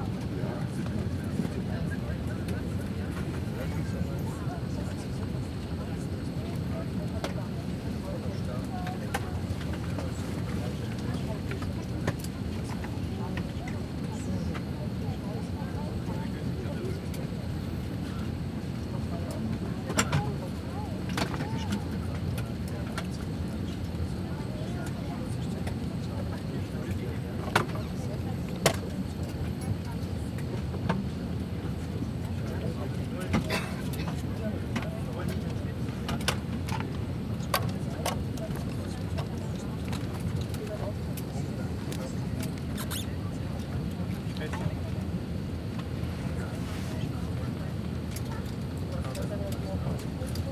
passengers boarding the plane, taking seats, putting their seat-belts on, putting away their carry on, quieting down children, flight announcements.